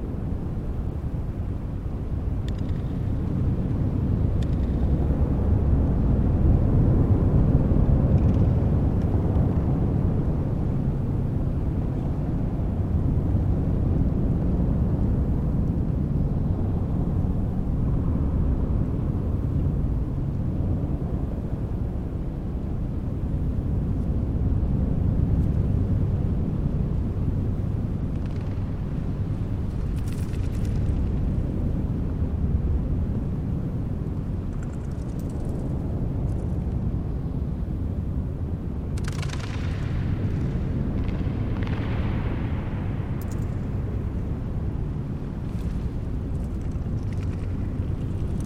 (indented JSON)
{
  "title": "Teufelsberg NSA Listening Post (ruin) - tower radome interior",
  "latitude": "52.50",
  "longitude": "13.24",
  "altitude": "114",
  "timezone": "GMT+1"
}